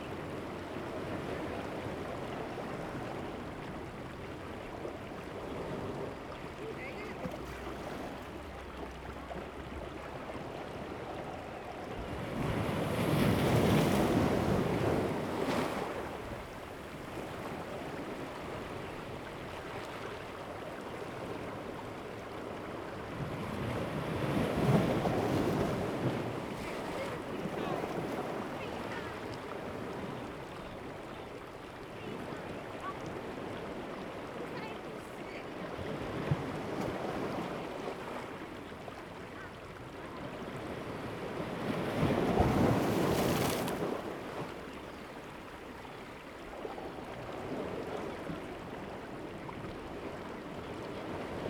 磯崎村, Fengbin Township - Small pier
Small pier, Sound of the waves, Very Hot weather
Zoom H2n MS+XY
Fengbin Township, 花東海岸公路, 28 August 2014